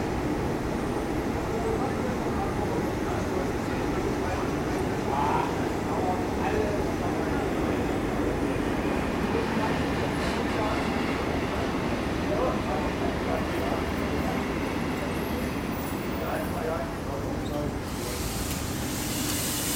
mannheim, main station, railroad traffic
recorded june 28th, 2008, around 10 p. m.
project: "hasenbrot - a private sound diary"